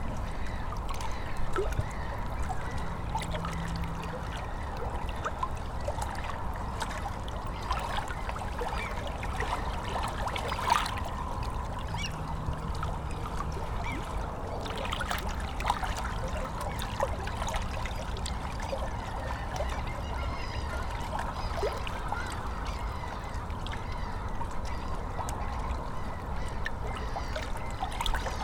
Fisksätra Holme - Sur le bord de l'ïle
Sur le bord de l'île, on entend toujours en fond les voitures. Parfois aussi des bateaux et régulièrement le train.